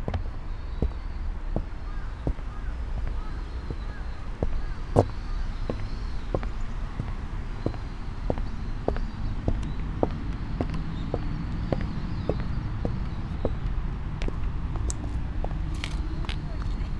curved wooden bridge, shoseien, kyoto - steps